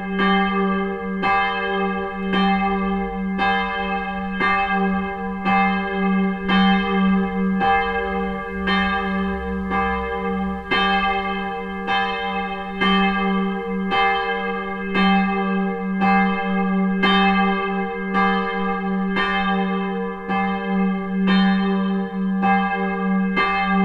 At the church of hosingen on a fresh cold and wind summer evening. The sound of the evening bells. If you listen careful you can hear the swinging of the bells before and after they chime.
Hosingen, Kirche, Abendglocken
Bei der Kirche von Hosingen an einem kalten und windigen Sommerabend. Das Läuten der Abendglocken. Wenn Du sorgfältig hinhörst, dann kannst Du das Schwingen der Glocken vor und nach dem Schlag hören.
Hosingen, église, carillon du soir
A l’église d’Hosingen, un soir d’été frais et venteux. Le son du carillon du soir. En écoutant attentivement, on entend le bruit du balancement des cloches avant et après le carillon.
Hosingen, Luxembourg, 12 September 2011, 18:47